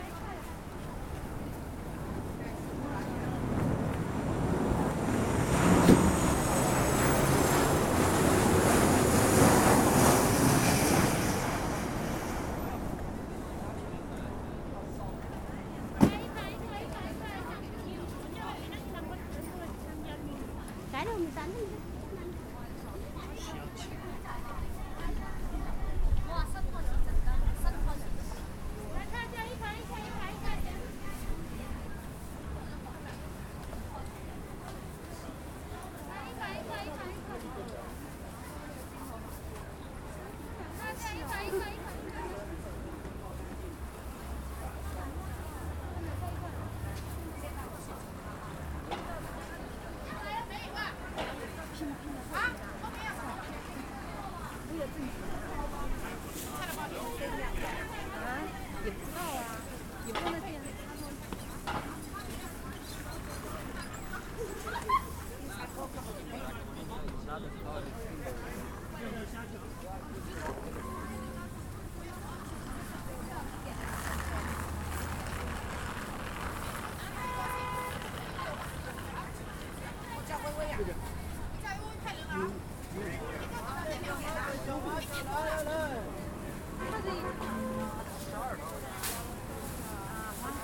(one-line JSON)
{"title": "Flushing, Queens, NY, USA - Sidewalk Life Outside Main Street Food Court", "date": "2017-03-04 12:20:00", "description": "Sidewalk life outside Main Street Food Court, including a good example of the continual airplane traffic overhead", "latitude": "40.76", "longitude": "-73.83", "altitude": "12", "timezone": "America/New_York"}